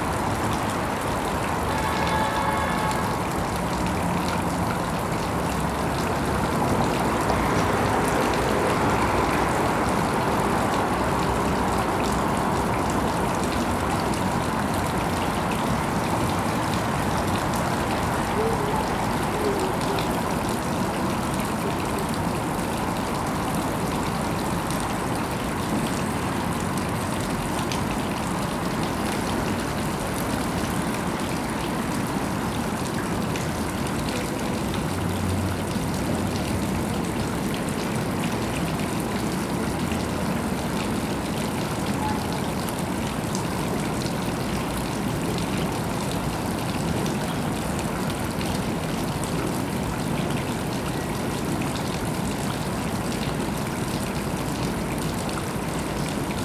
00061 - BONREPOS, 31500 Toulouse, France - boat lock
water, boat lock, road traffic, person speaking
pedestrian crossing, skateborder
Capatation ZOOMh4n